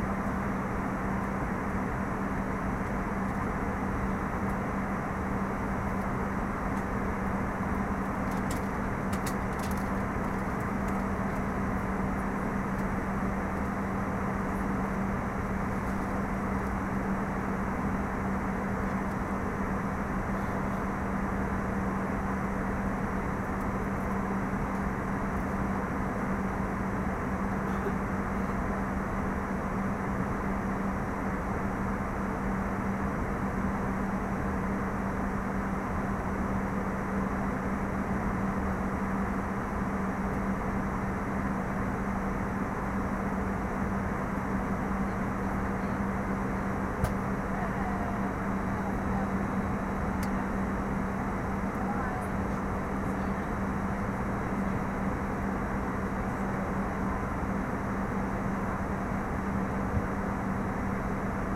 on air... in atterraggio (landing) per Milano Linate
in aereo da Palermo a Milano stiamo cominciando la disceda per Linate.(Romanlux) edirol r-09hr